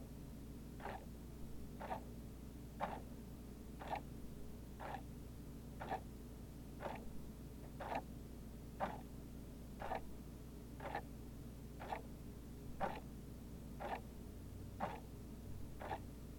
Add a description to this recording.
Two clocks that are slightly out of sync placed side-by-side in my friend's apartment